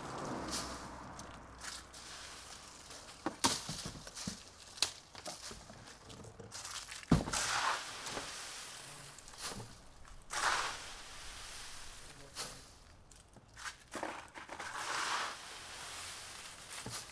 Rijeka, Croatia - Leavs After Wind